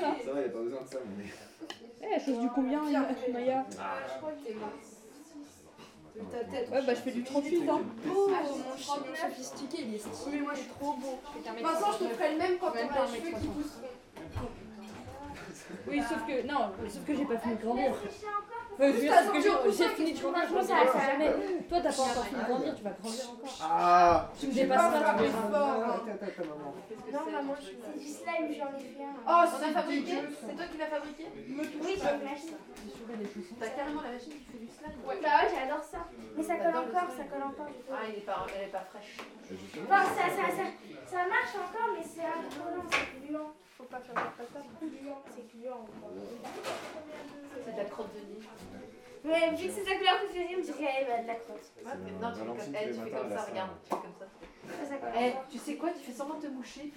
Lucé, France - Children playing during christmas time
During christmas time in Chadia's home, children are loudly playing.
28 December 2017, 21:00